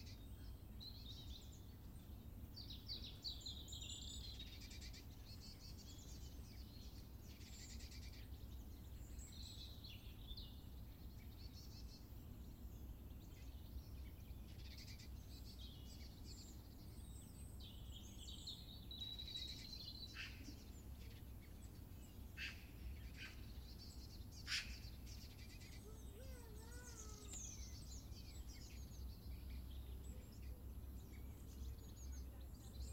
Southcote Junction Reading UK - Trains, people and birds.
I set the mics on a path running beside the railway track just outside Reading. The conditions were good in that there was no wind and there were trains and people, and birds. Pluggies AB with foam add-ons into an old favourite Tascam.
England, United Kingdom, 15 January 2021, 11:23